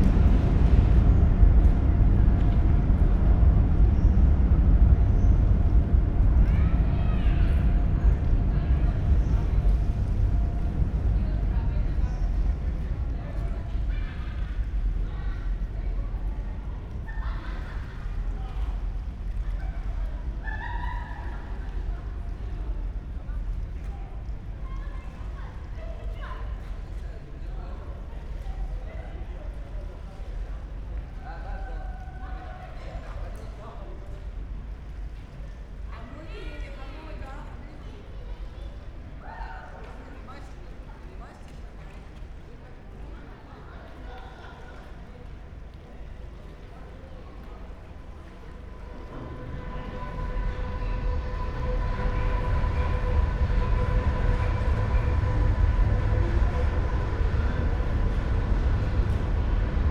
Köln Deutz, under Hohenzollerbrücke, train bridge, drone of various passing-by trains
(Sony PCM D50, Primo EM172)